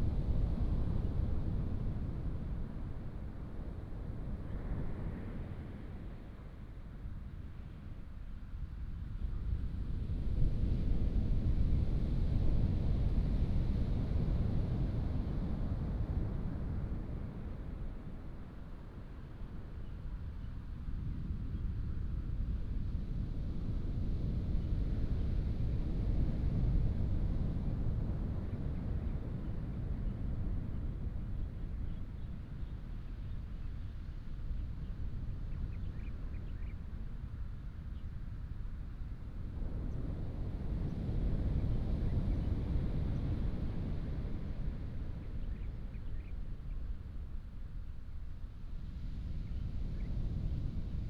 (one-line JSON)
{"title": "太麻里海岸, Taitung County - at the seaside", "date": "2018-04-03 17:15:00", "description": "at the seaside, Bird cry, Sound of the waves, Beach, traffic sound", "latitude": "22.61", "longitude": "121.01", "altitude": "6", "timezone": "Asia/Taipei"}